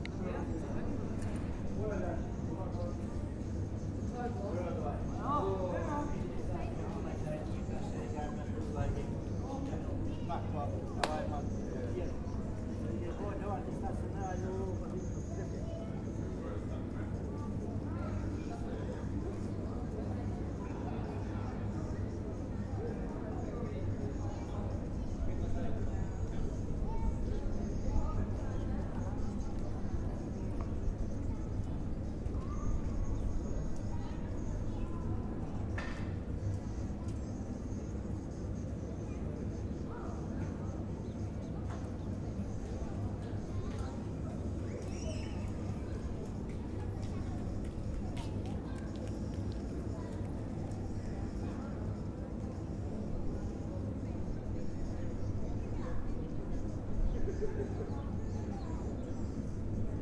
Grajski trg, Maribor, Slovenia - corners for one minute
one minute for this corner - grajski trg, hotel orel, entrance